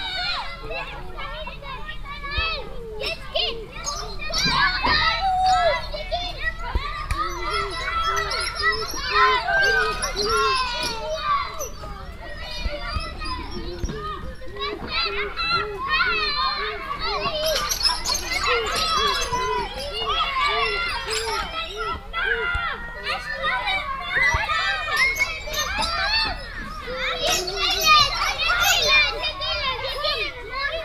hosingen, kindergarden, playground
At a playground of the local kindergarden. A larger group of kids either playing ball or climbing on a wooden construction.
Recorded in the morning time on a warm, windy summer day.
Hosingen, Kindergarten, Spielplatz
Auf einem Spielplatz im lokalen Kindergarten. Eine größere Gruppe von Kindern spielt Ball oder klettert auf eine Holzkonstruktion. Aufgenommen am Morgen an einem warmen windigen Sommertag.
Hosingen, école maternelle, cour
Dans la cour de l’école maternelle du village. Un important groupe d’enfants jouent à la balle ou escaladent une construction en bois. Enregistré le matin un jour d’été chaud et venteux.
Project - Klangraum Our - topographic field recordings, sound objects and social ambiences
Hosingen, Luxembourg, 11 July 2011